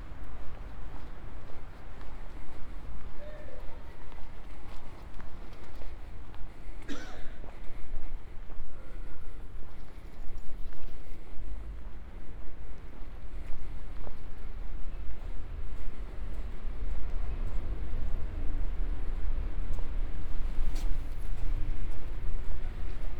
Ascolto il tuo cuore, città. I listen to your heart, city. Several chapters **SCROLL DOWN FOR ALL RECORDINGS** - Saturday market and plastic waste in the time of COVID19, Soundwalk
"Saturday market and plastic waste in the time of COVID19", Soundwalk
Chapter XXXIII of Ascolto il tuo cuore, città. I listen to your heart, city
Saturday April 4th 2020. Shopping in open market of Piazza Madama Cristina, including discard of plastic waste, twenty five days after emergency disposition due to the epidemic of COVID19.
Start at 3:52 p.m. end at 4:21 p.m. duration of recording 29'09''
The entire path is associated with a synchronized GPS track recorded in the (kml, gpx, kmz) files downloadable here:
4 April 2020, 15:52